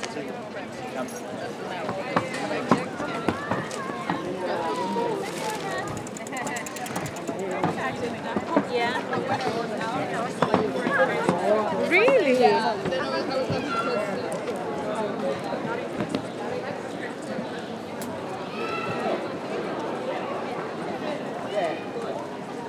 Store Torv, Aarhus, Danmark - Store Torv
7 January 2019, 15:03